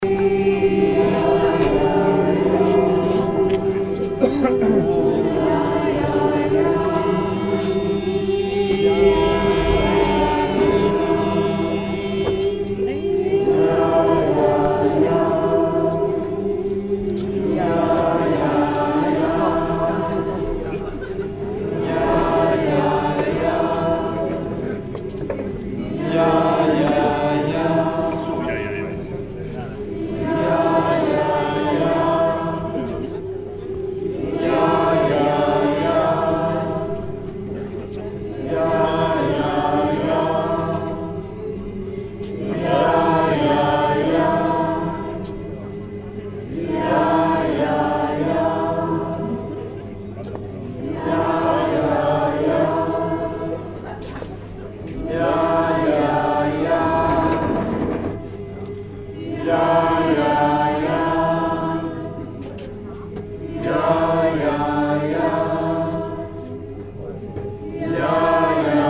cologne, filmhaus, filmhaus choir
first performance of the cologne based filmhaus choir conducted by guido preuss - here with involved audience humm walk - recording 03
soundmap nrw - social ambiences and topographic field recordings
Deutschland, European Union